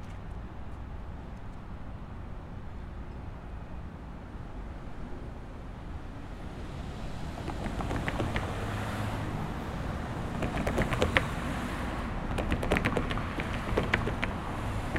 A recording of Baltimore's Light Rail public transit as well as local traffic driving over the Light Rail tracks. Sounds from the nearby demolition preparation at the Dolphin Building can be heard as well. This was recorded using a Zoom H4n recorder.
12 September 2016, 13:20